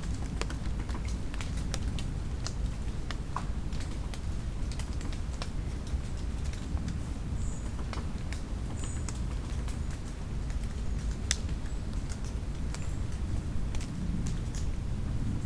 Kloster Insel, Rheinau, Schweiz - fogdrops Rheinau O+A
Bruce Odland and I (O+A)
researched the auditory qualities around Rheinau over more than a year. The resulting material served as the starting point and source material for our Rheinau Hearing View project and became part of the Rheinau Hearing View library.
Bezirk Andelfingen, Zürich, Schweiz/Suisse/Svizzera/Svizra, 20 October 2012, ~11:00